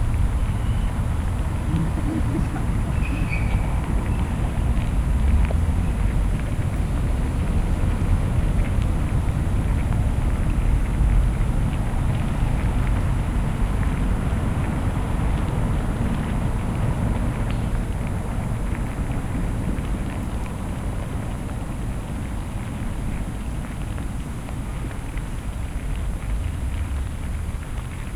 Poznan, Wilda district, yard of closed car school - contact microphones
sounds of ants in their hill. someone had JrF contact microphones plugged into their zoom recorder and left headphones on the ground. recording is made by placing sony d50 mics into one of the earpieces of the headphones. the surrounding sound got picked up anyway. Chris Watson unwittingly cutting into my recording by talking to other workshop participants.